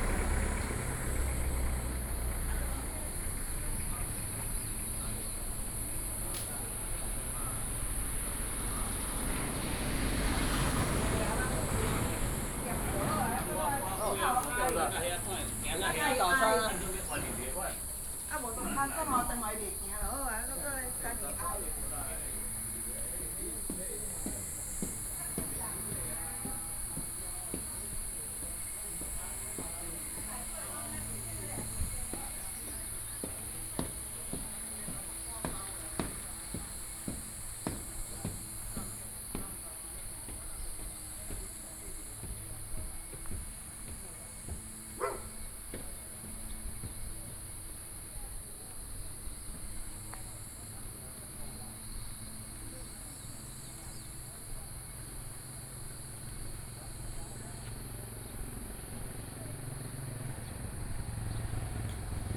Taomi Ln., Puli Township, Nantou County - Walking in a small village
Walking in a small village, Traffic Sound